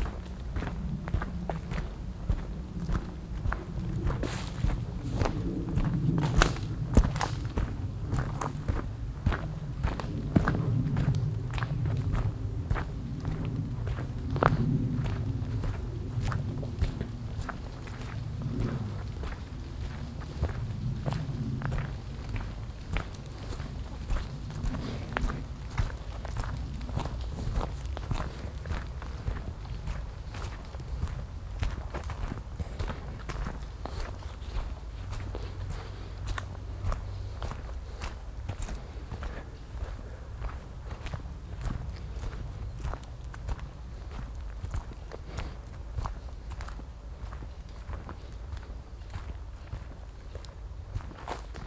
Tandel, Luxemburg - Tandel, forest walk
An einem Sommer Morgen in einem Laubwald. Ein Flugzeug überfliegt die Region und der Klang der Schritte auf steinigem und leicht matschigem Waldgrund.
On a summer morning. Walking through a broadleaf forest. The sound of a plane crossing the region the steps on the stoney and light muddy forest ground.
Tandel, Luxembourg, 7 August, 09:15